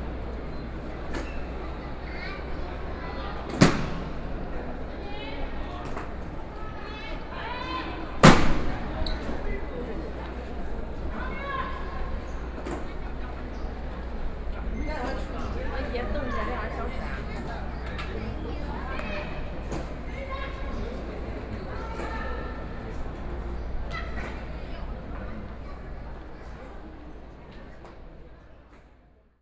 Sun Yat-Sen Memorial Hall - Guard ceremony
In the hall of the Guard ceremony, Sony PCM D50 + Soundman OKM II
Taipei City, Taiwan, 29 September